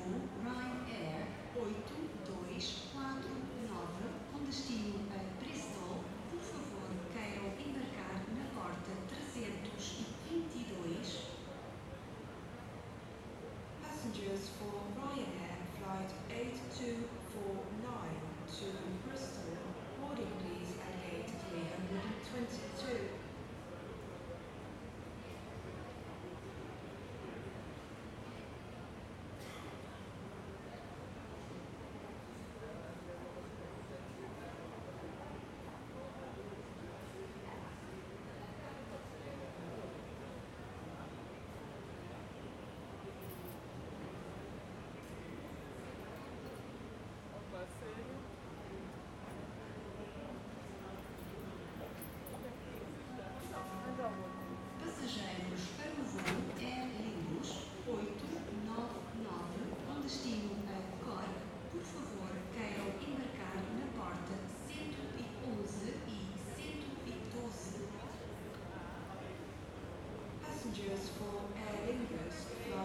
Faro, Portugal - Faro - Portugal - Airport
Faro - Portugal
Aéroport - ambiance hall d'embarquement.
Zoom H3VR
7 October 2018